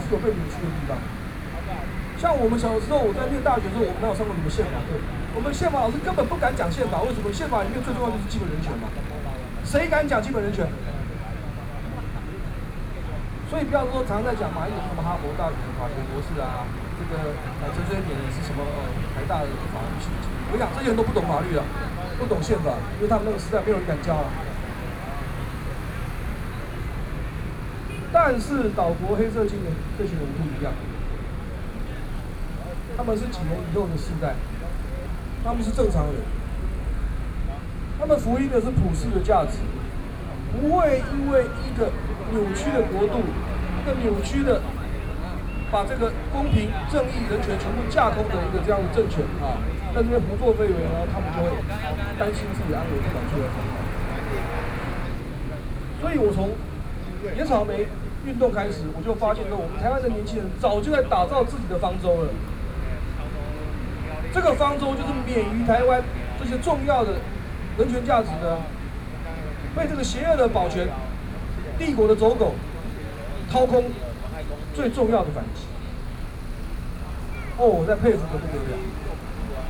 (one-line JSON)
{"title": "Zhongzheng District, Taipei - Protest", "date": "2013-10-09 19:34:00", "description": "Speech, University professors and students gathered to protest, Sony PCM D50+ Soundman OKM II", "latitude": "25.04", "longitude": "121.52", "altitude": "11", "timezone": "Asia/Taipei"}